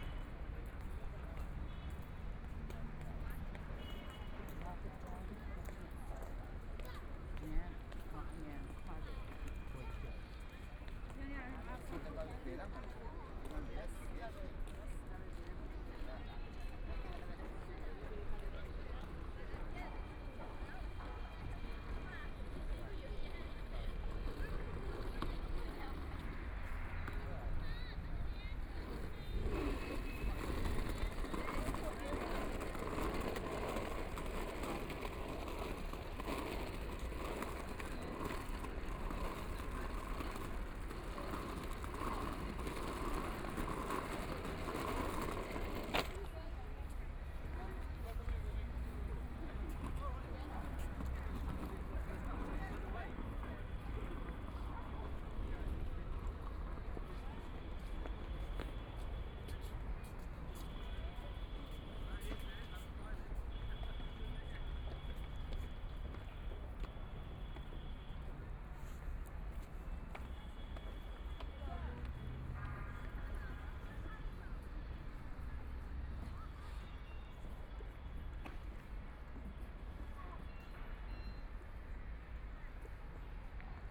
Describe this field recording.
Walking through the Park, Construction site noise, traffic sound, Binaural recording, Zoom H6+ Soundman OKM II